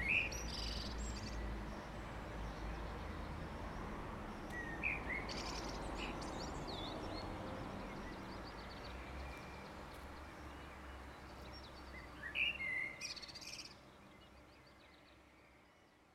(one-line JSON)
{"title": "Pl. du Peuple, Saint-Étienne, France - St-Etienne - Loire - Bornes audio", "date": "2013-08-13 07:00:00", "description": "St-Etienne - Loire\nPlace du Peuple\nSur la place Centrale, des bornes audio sont installées pour guider les touristes ou autres dans leur déplacements dans la ville.\nVandalisées elles ne resteront que quelques mois (semaines).", "latitude": "45.44", "longitude": "4.39", "altitude": "527", "timezone": "Europe/Paris"}